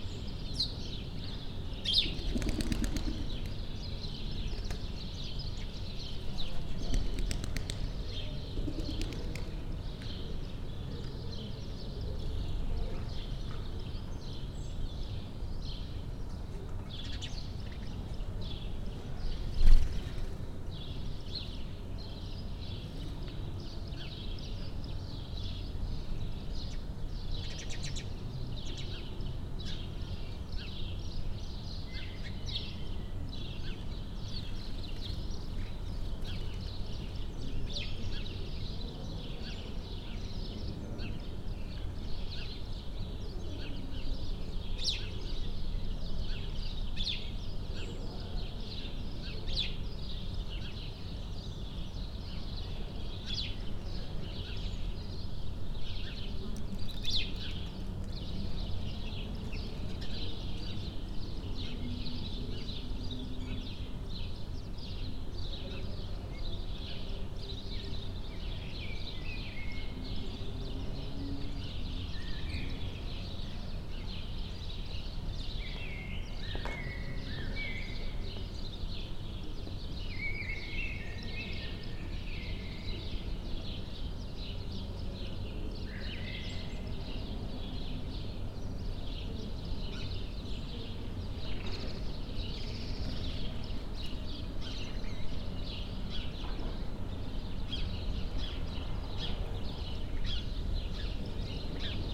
Mariánske námestie, Žilina, Slovensko - Mariánske námestie, Žilina, Slovakia
Almost empty square because of quarantine.